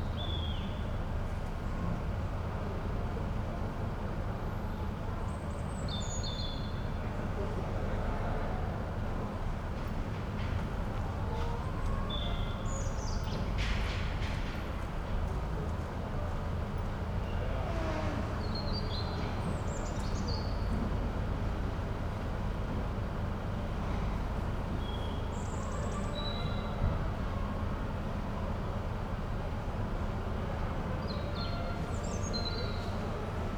cold spring evening, people cleaning up after an event.
(Sony PCM D50)